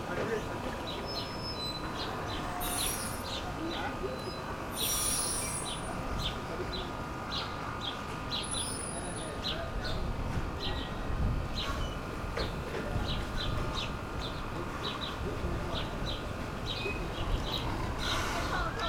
Berlin, Eichenstr. - restaurant boat at the quai
Berlin Eichenstr., entrance area for restaurant boat Hoppetosse, fridge generator, boat squeaking and creaking at the quai wall, wind